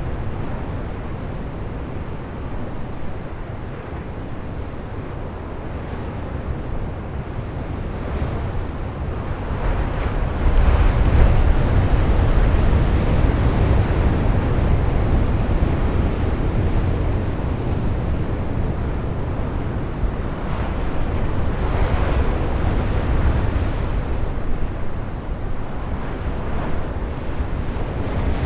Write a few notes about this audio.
La mer est souvent agité au phare de Ploumanac'h. Les vagues sont assourdissantes. At the Ploumanach lighthouse pretty wild waves crush into the rocks. Getting closer is dangerous. /Oktava mk012 ORTF & SD mixpre & Zoom h4n